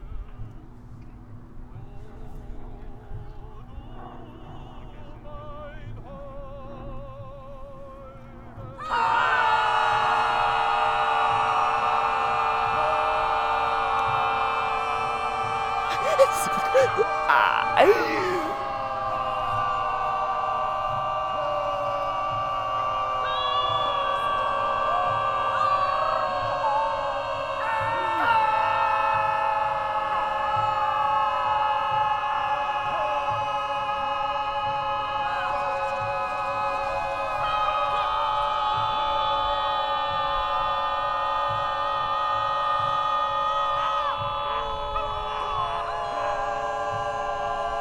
Tempeltofu, by Tomomi Adachi, composition for voices, vuvuzelas, bicycles and trombones.